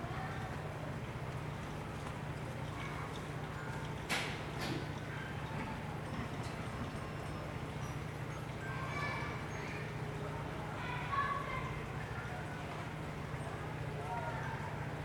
Small alley, Community alley at night
Sony Hi-MD MZ-RH1 +Sony ECM-MS907
New Taipei City, Taiwan, 16 February